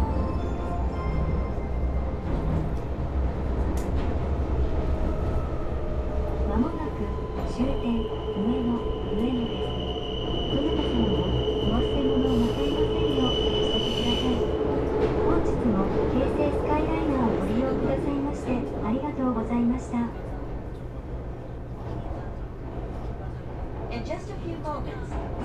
skyliner, express train, last part of the ride to ueno station
Taito, Tokyo, Japan, 7 November 2013